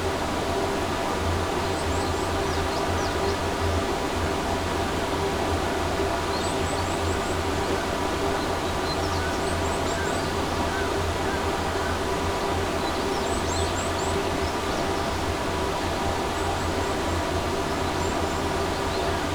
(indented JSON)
{
  "date": "2021-06-12 08:00:00",
  "description": "large upturned clay urn 大항아리...roadside",
  "latitude": "37.93",
  "longitude": "127.64",
  "altitude": "229",
  "timezone": "Asia/Seoul"
}